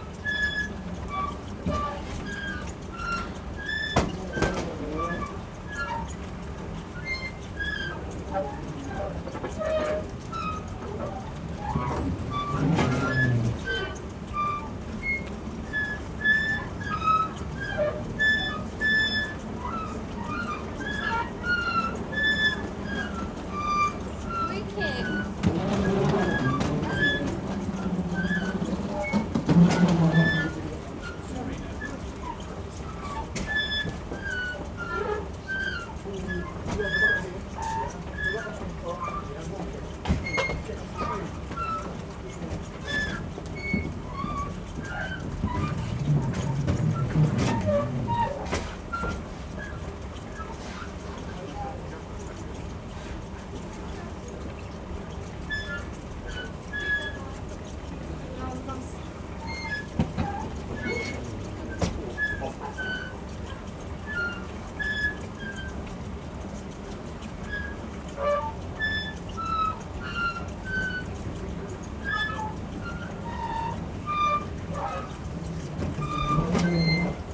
Stansted Airport, UK - Tuneful escalator squeak
A surprisingly melody brightening the journey to Berlin.
Stansted, Essex, UK, 23 May 2016, 07:15